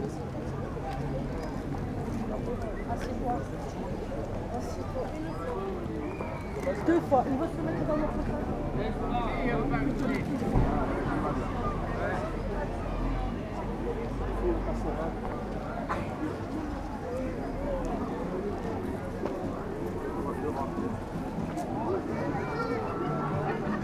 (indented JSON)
{
  "title": "Ganges, France - Market",
  "date": "2016-05-06 11:05:00",
  "description": "The local market in Ganges. This is a very huge market, people come from far to stroll here.",
  "latitude": "43.93",
  "longitude": "3.71",
  "altitude": "164",
  "timezone": "Europe/Paris"
}